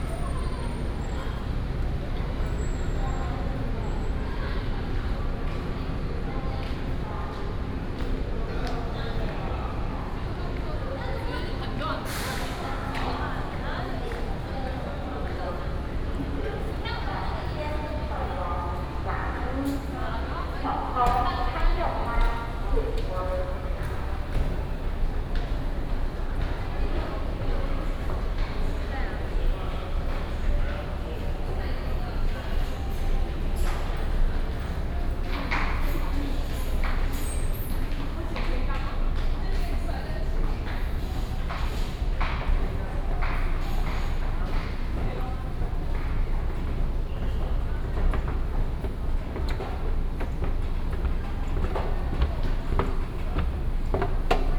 Taoyuan Station, Taoyuan City - Walk to the train station lobby
Walk to the train station lobby, Traffic sound, footsteps